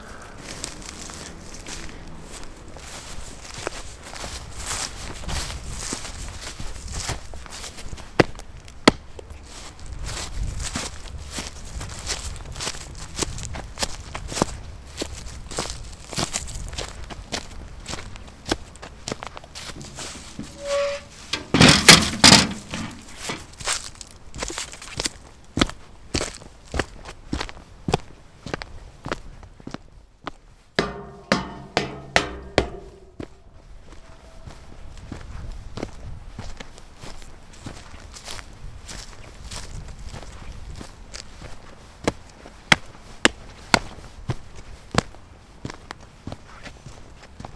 Walking in the garden and paths of the Hansen hospital exterior. Uploaded by Josef Sprinzak
Jerusalem, Israel, 21 January 2014